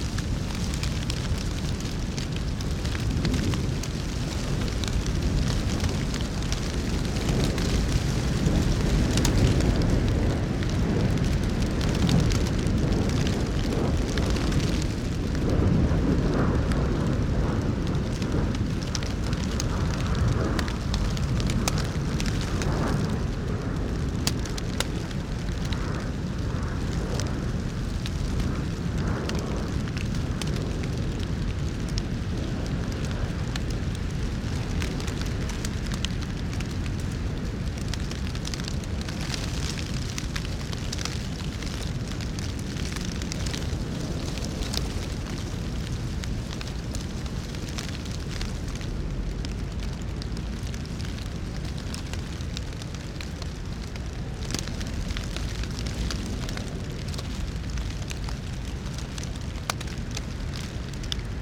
Recorded from a continuous audio steam set up at this location in a low bush and left overnight until the following morning. After dark no birds sang. One plane passes overhead. The background drone is the area's constant traffic. The close sounds are rain drops falling on dead leaves and wind ruffling through the undergrowth. Despite the rain the leaves are very dry and crackly. The movements heard trace sharp gusts of wind at ground level.
Praha, Česko, 7 April 2022